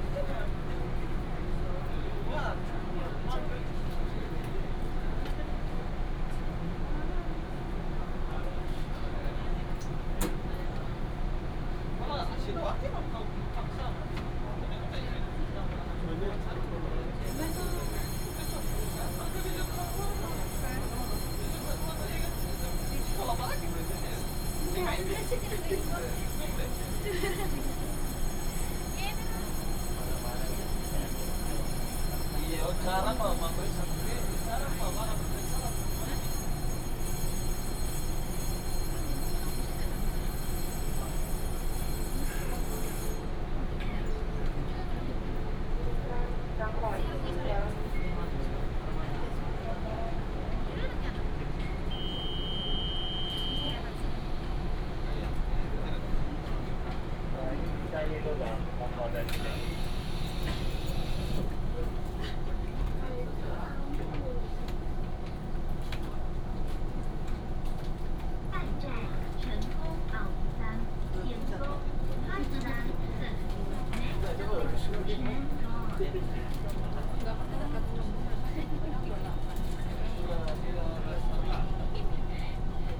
Changhua City, Changhua County - Inside the train compartment
Inside the train compartment, The train arrived, Station message broadcast
Binaural recordings, Sony PCM D100+ Soundman OKM II
Changhua County, Taiwan, 17 February 2018, ~09:00